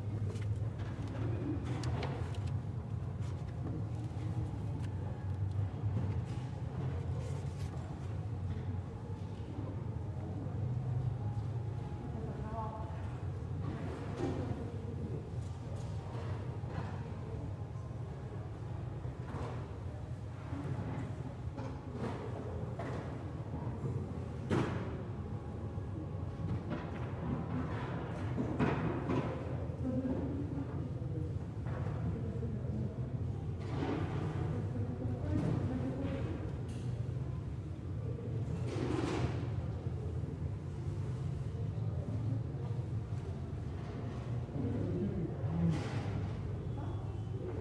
Ak., Bogotá, Colombia - El Tintal Library
In this ambience you can hear what it feels like to be inside the El Tintal Library, you are able to hear someone passing book's pages, people talking, arranging books and moving chairs, also, since it's next to an avenue you can hear some cars' horn.
Región Andina, Colombia